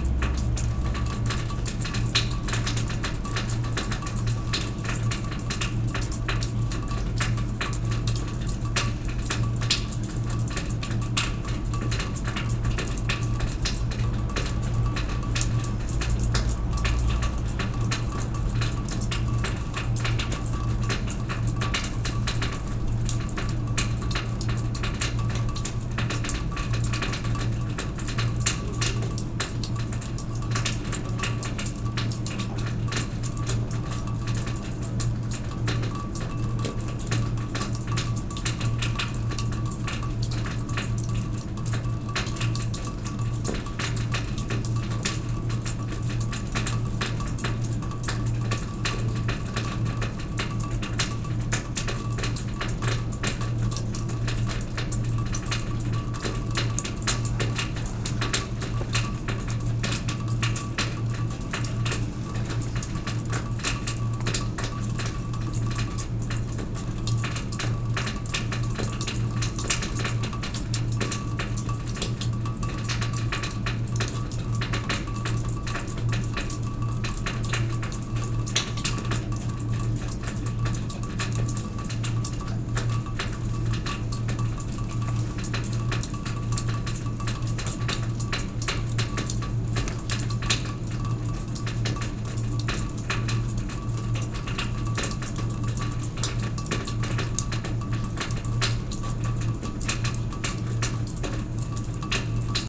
France, Auvergne, snowmelt, water
Moulins, France, 29 December 2010, 01:00